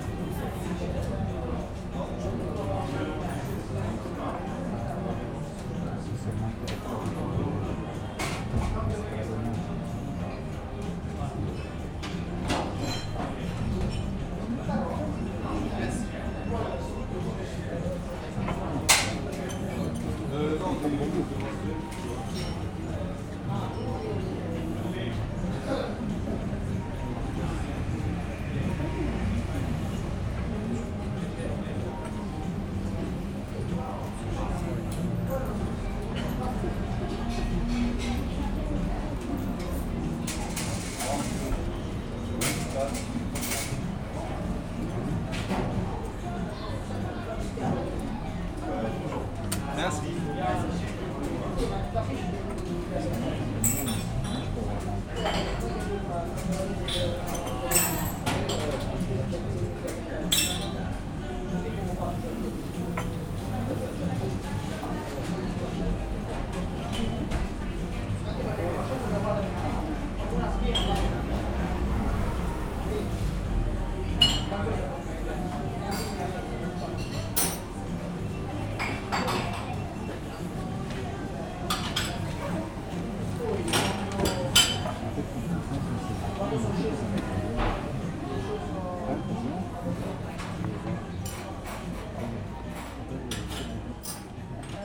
{
  "title": "Paris, France - Paris café",
  "date": "2019-01-02 15:00:00",
  "description": "Traveling through Paris, we made a stop into a quiet bar. Calm sounds of tourists ans the barman making some coffee.",
  "latitude": "48.85",
  "longitude": "2.35",
  "altitude": "35",
  "timezone": "Europe/Paris"
}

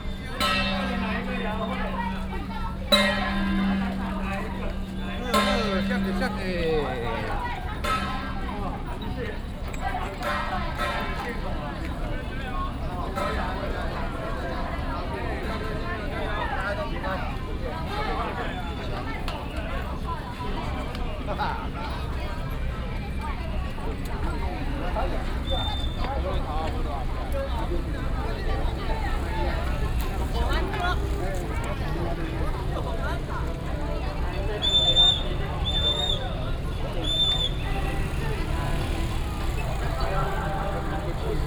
{
  "title": "Dongxing, Lunbei Township - Whistle sound",
  "date": "2017-03-01 10:48:00",
  "description": "Matsu Pilgrimage Procession, Traffic sound, Firecrackers and fireworks, A lot of people, Directing traffic, Whistle sound",
  "latitude": "23.76",
  "longitude": "120.37",
  "altitude": "19",
  "timezone": "Asia/Taipei"
}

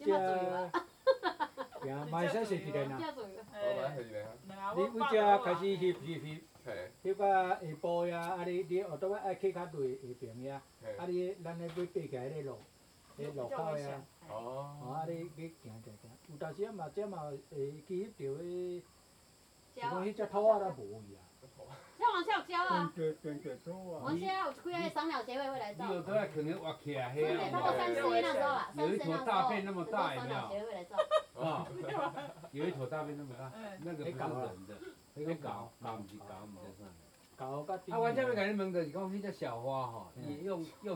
{
  "title": "馬璘窟, 人聲, Puli, Taiwan - Taiwan cordial",
  "date": "2015-09-08 11:30:00",
  "description": "Taiwan cordial。\nZoon H2n (XY+MZ) (2015/09/08 007), CHEN, SHENG-WEN, 陳聖文",
  "latitude": "24.00",
  "longitude": "120.91",
  "altitude": "712",
  "timezone": "Asia/Taipei"
}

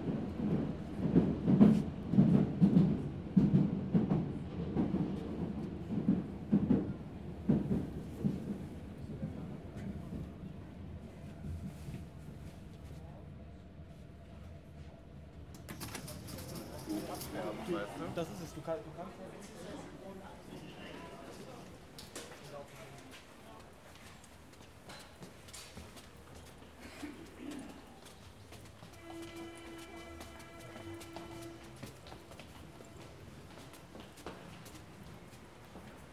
{
  "title": "Friedrichshain, Berlin, Germany - Catching the underground-train from Frankfurter Allee to Frankfurter Tor",
  "date": "2017-05-02 12:45:00",
  "description": "ubahn and bahnhof noises between frankfurter allee to frankfurter tor 170502-001.\nrecorded with zoom 4hn-sp, with wind protection.",
  "latitude": "52.52",
  "longitude": "13.45",
  "altitude": "43",
  "timezone": "Europe/Berlin"
}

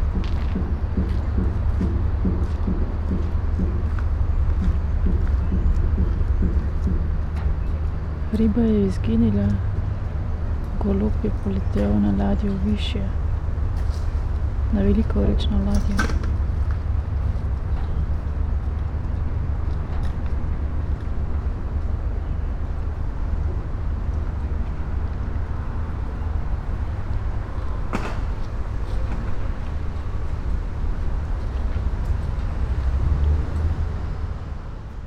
{
  "title": "Märkisches Ufer, Berlin, Germany - tiny boats at the foot of river Spree ships, still fish, pigeon",
  "date": "2015-09-02 14:28:00",
  "description": "spoken words with the city sounds, wind\nfor the Sonopoetic paths Berlin",
  "latitude": "52.51",
  "longitude": "13.41",
  "altitude": "40",
  "timezone": "Europe/Berlin"
}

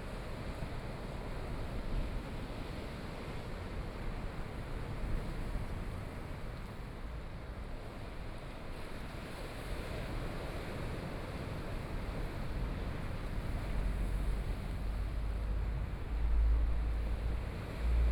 {
  "title": "Hualien City, Taiwan - Sound of the waves",
  "date": "2013-11-05 12:56:00",
  "description": "Sound of the waves, Binaural recordings, Sony PCM D50+ Soundman OKM II",
  "latitude": "23.97",
  "longitude": "121.61",
  "altitude": "7",
  "timezone": "Asia/Taipei"
}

